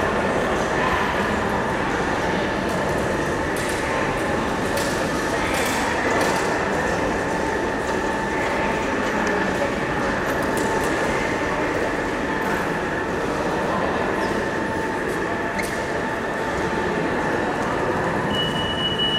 verona - stazione porta nuova
stazione porta nuova
22 October 2009, ~15:00